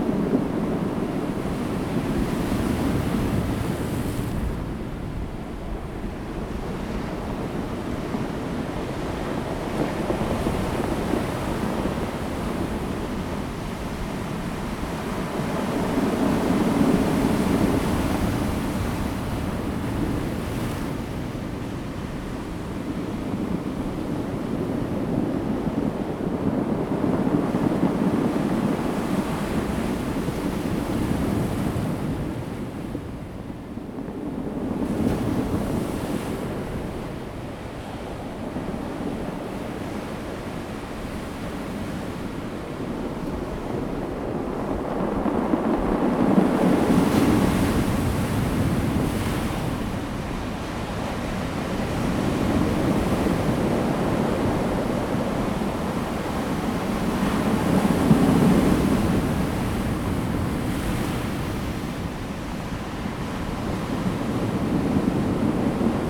達仁鄉南田村, Taitung County - Rolling stones
Sound of the waves, Rolling stones
Zoom H2n MS +XY
Taitung County, Daren Township, 台26線, 23 March 2018, ~11:00